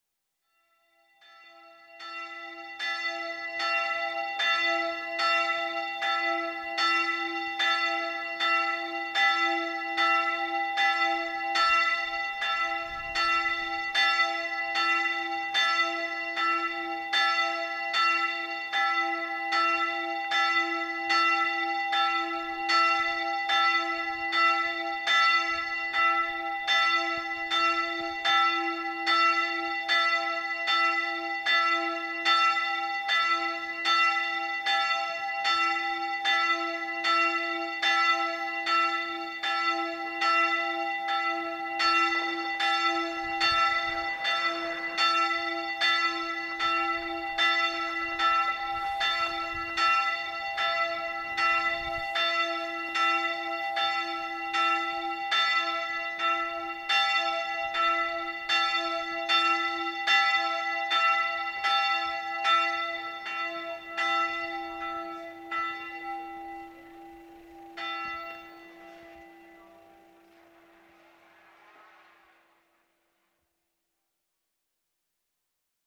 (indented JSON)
{
  "title": "Kalwaria Paclawska, Poland - (876) Bells",
  "date": "2021-12-25 12:15:00",
  "description": "Bells recording near the end of a mass.\nRecorded with Olympus LS-P4",
  "latitude": "49.63",
  "longitude": "22.71",
  "altitude": "456",
  "timezone": "Europe/Warsaw"
}